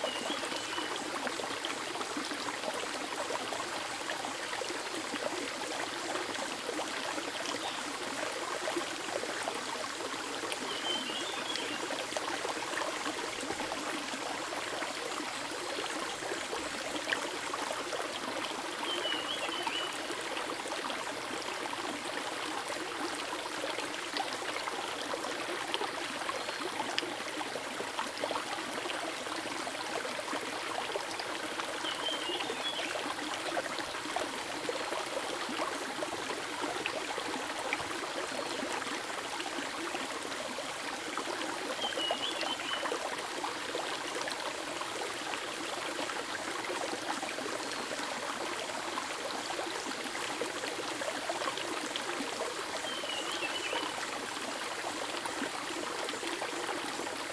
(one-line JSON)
{"title": "Hualong Ln., Yuchi Township - Upstream streams", "date": "2016-06-08 07:40:00", "description": "Upstream, streams sound, Birds called\nZoom H2n MS+XY +Spatial audio", "latitude": "23.93", "longitude": "120.88", "altitude": "702", "timezone": "Asia/Taipei"}